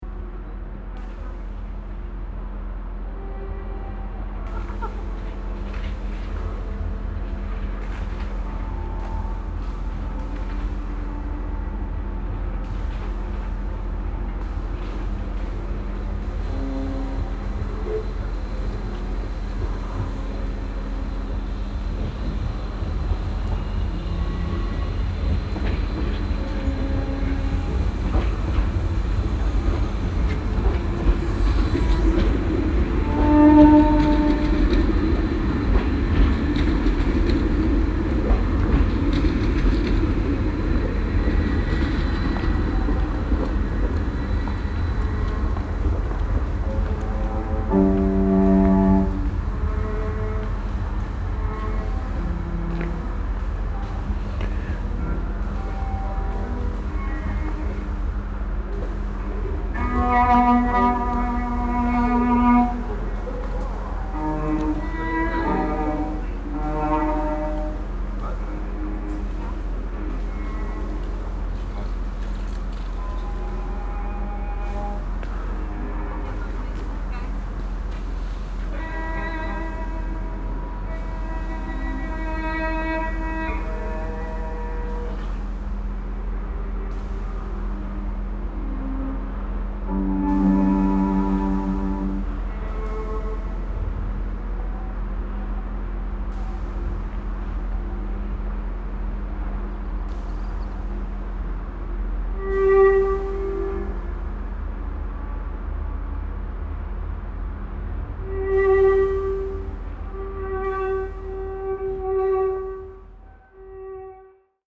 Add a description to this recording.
Outdoor at the railway tracks nearby the main station during the documenta 13. The sound of a sound installation by Susan Philipsz accompanied by the sounds of incoming trains. soundmap d - social ambiences, art places and topographic field recordings